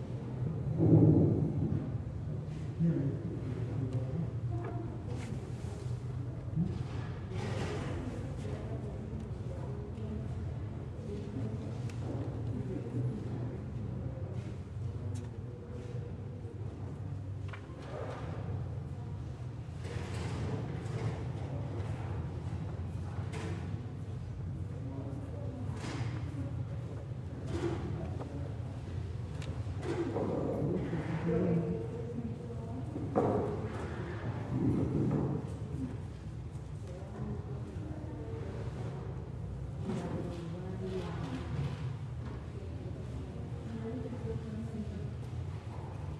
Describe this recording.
In this ambience you can hear what it feels like to be inside the El Tintal Library, you are able to hear someone passing book's pages, people talking, arranging books and moving chairs, also, since it's next to an avenue you can hear some cars' horn.